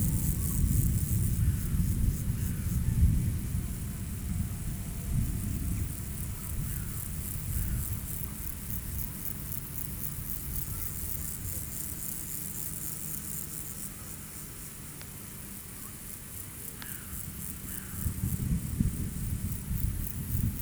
Châtillon-sur-Seine, France - Storm
During this evening, it's an hot and threatening athmosphere. A violent storm is brewing on the horizon. There's a lot of locusts and mosquitoes. During this night, we had 7 dangerous storms, whose 2 were enormous, and 2 storms again in the morning. Exhausting !